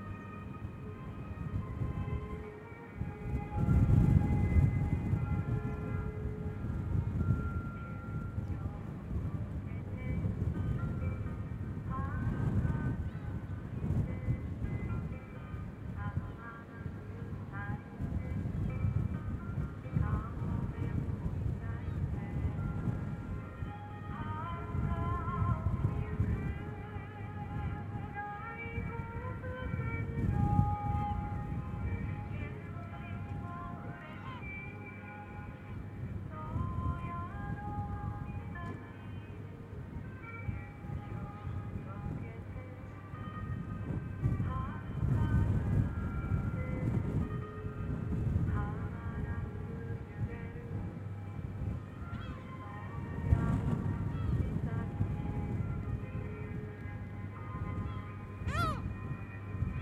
Soyamisaki, Hokkaido, Japan - The very windy north of Japan
At the north end of Hokkaido island, a very windy place and a sounding sculpture.
Hokkaidō, Japan, 7 May 2015